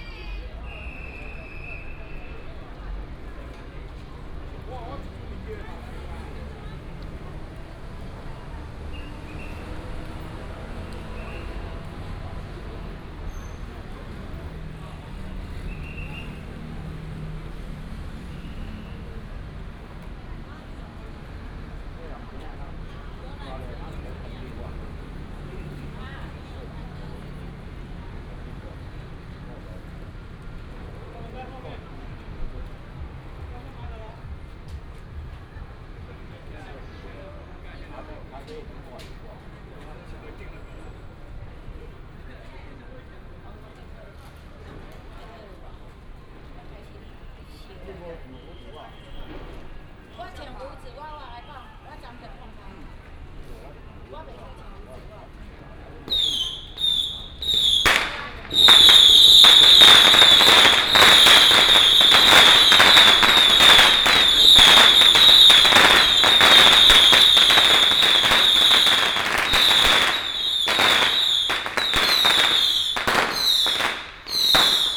Firecrackers and fireworks, Traffic sound